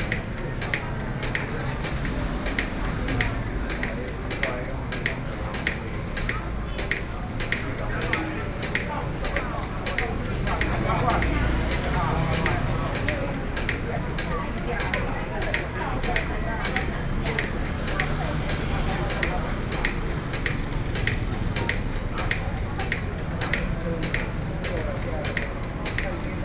Guangzhou St., Taipei City - Vendors car
Taipei City, Taiwan